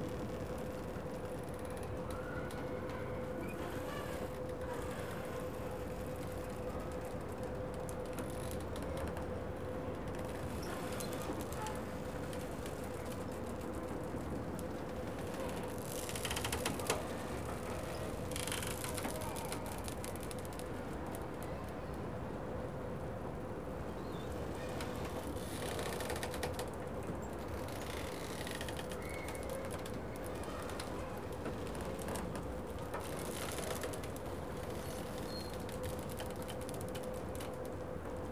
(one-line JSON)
{"title": "Puerto Olímpico de Barcelona, Barcelona, Catalunya, Spain - Boats in the marina", "date": "2014-12-07 15:33:00", "description": "Boats pulling at their moorings, squeaking, creaking, and squealing.", "latitude": "41.39", "longitude": "2.20", "altitude": "6", "timezone": "Europe/Madrid"}